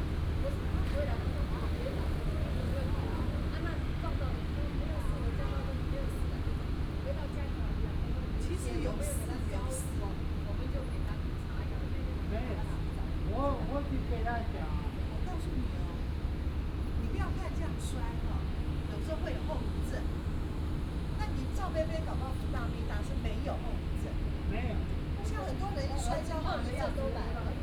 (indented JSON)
{
  "title": "虎嘯公園, Da’an Dist., Taipei City - in the Park",
  "date": "2015-07-28 17:24:00",
  "description": "in the Park, Several older people taking pictures, air conditioning Sound",
  "latitude": "25.02",
  "longitude": "121.55",
  "altitude": "25",
  "timezone": "Asia/Taipei"
}